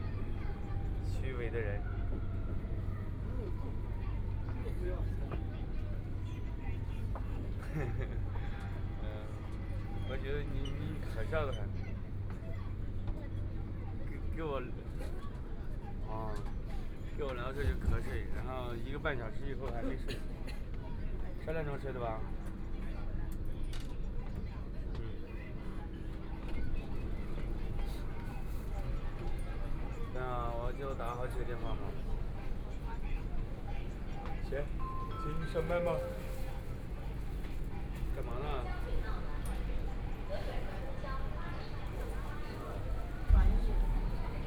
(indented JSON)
{
  "title": "Huangpu District, Shanghai - Line2 (Shanghai Metro)",
  "date": "2013-11-21 10:21:00",
  "description": "Line2 (Shanghai Metro), from East Nanjing Road station to Dongchang Road station, Binaural recording, Zoom H6+ Soundman OKM II",
  "latitude": "31.24",
  "longitude": "121.49",
  "timezone": "Asia/Shanghai"
}